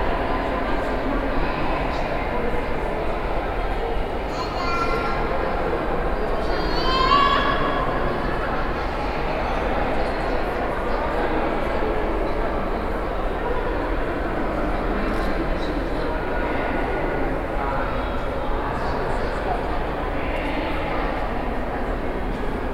Austin, Texas State Capitol, Third Floor under the dome
USA, Texas, Austin, Capitol, Dome, binaural